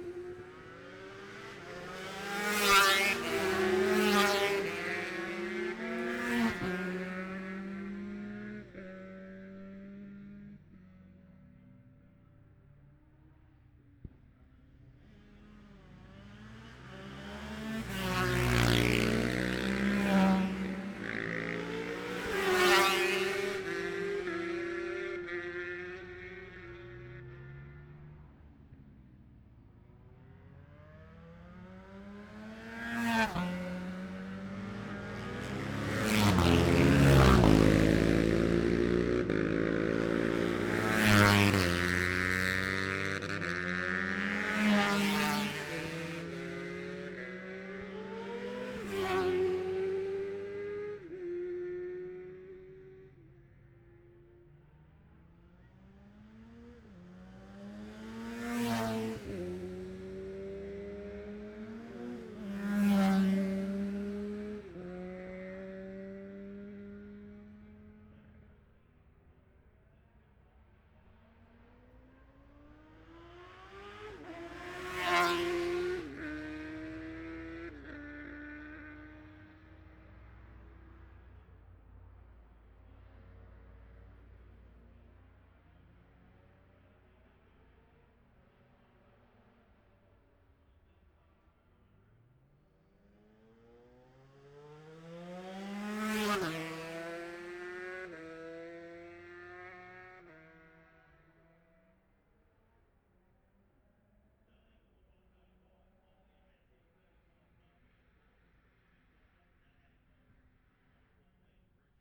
Jacksons Ln, Scarborough, UK - olivers mount road racing 2021 ...
bob smith spring cup ... ultra-lightweights qualifying ... luhd pm-01 to zoom h5 ...
22 May 2021